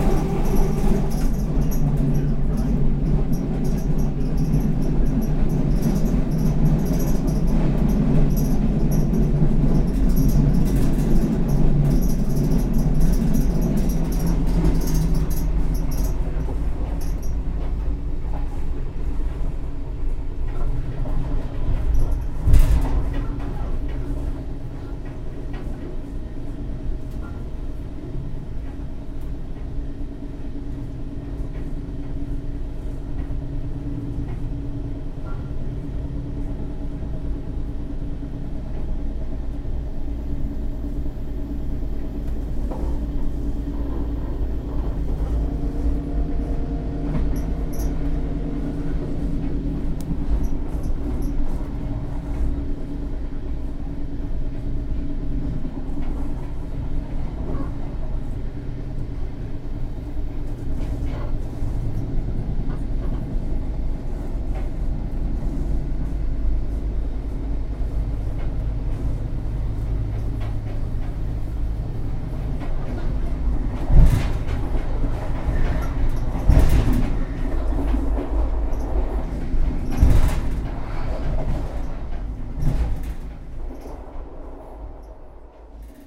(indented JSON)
{
  "title": "inside train munich - rosenheim",
  "description": "recorded june 6, 2008. - project: \"hasenbrot - a private sound diary\"",
  "latitude": "47.97",
  "longitude": "12.01",
  "altitude": "493",
  "timezone": "GMT+1"
}